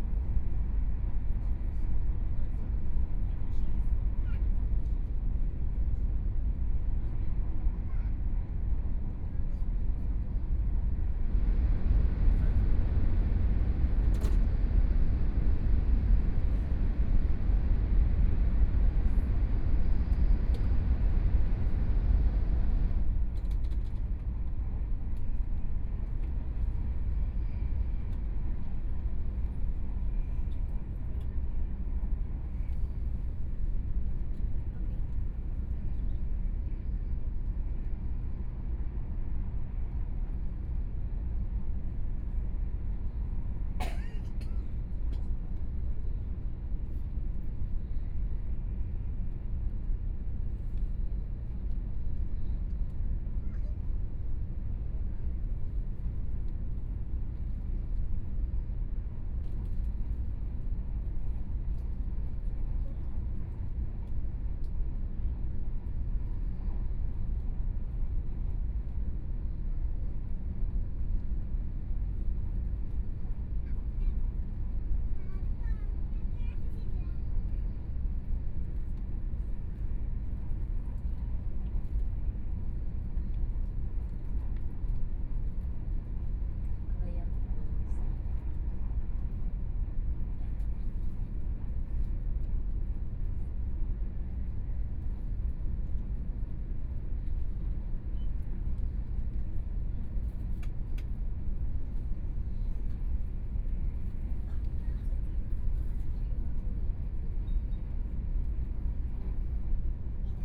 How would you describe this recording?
from Hsinchu Station to Taichung Station, Binaural recordings, Zoom H4n+ Soundman OKM II